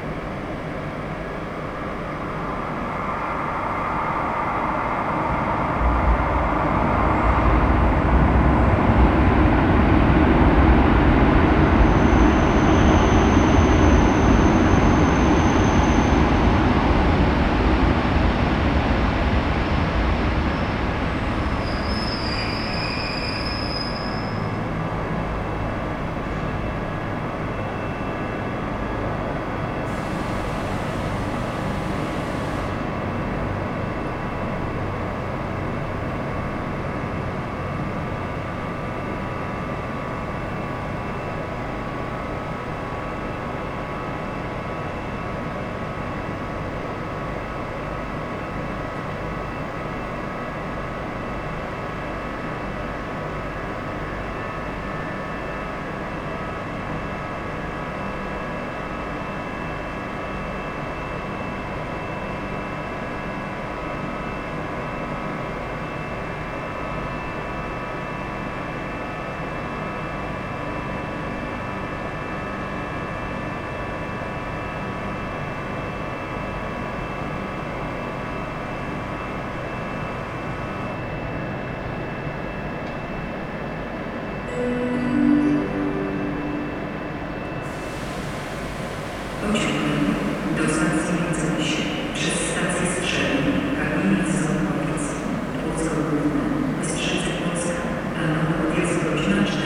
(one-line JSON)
{"title": "Wrocław, Piłsudskiego, Wrocław, Polska - Covid-19 Pandemia", "date": "2020-04-12 14:19:00", "description": "Wrocław Główny, is the largest and most important passenger train station in the city of Wrocław, in southwestern Poland. Situated at the junction of several important routes, it is the largest railway station in the Lower Silesia Voivodeship, as well as in Poland in terms of the number of passengers serviced.\nIn 2018, the station served over 21,200,000 passengers.\nThe station was built in 1855–1857, as the starting point of the Oberschlesische Eisenbahn (Upper Silesian Railway), as well as the line from Breslau to Glogau via Posen. It replaced the earlier complex of the Oberschlesischer Bahnhof (Upper Silesian Railway Station, built 1841–1842). Its designer was the royal Prussian architect Wilhelm Grapow, and in the mid-19th century, it was located near the southern outskirts of the city, as the areas to the south had not yet been urbanized.", "latitude": "51.10", "longitude": "17.04", "altitude": "122", "timezone": "Europe/Warsaw"}